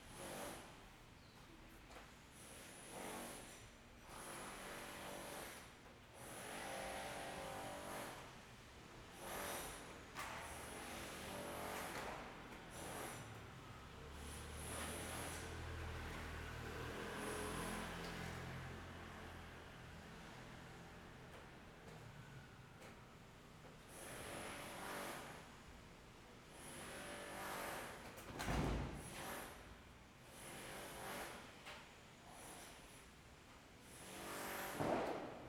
Ln., Sec., Zhongyang N. Rd., Beitou Dist - Construction
Rain, Construction, Traffic Sound, Zoom H6 MS